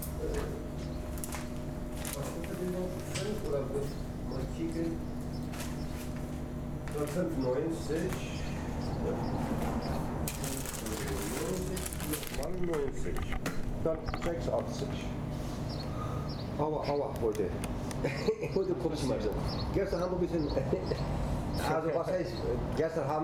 berlin, friedelstraße: kiosk - the city, the country & me: kiosk
owner of the kiosk explains that he had drunk too much beer the night before
the city, the country & me: april 28, 2011